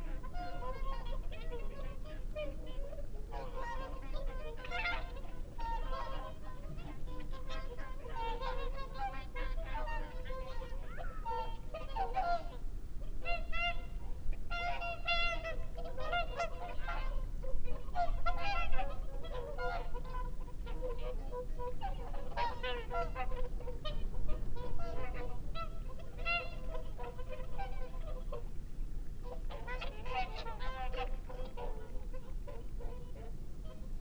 Dumfries, UK - whooper swan soundscape ...
whooper swan soundscape ... xlr sass to zoom h5 ... bird calls from ... curlew ... wigeon ... mallard ... time edited unattended extended recording ...
Alba / Scotland, United Kingdom, 3 February 2022, 18:05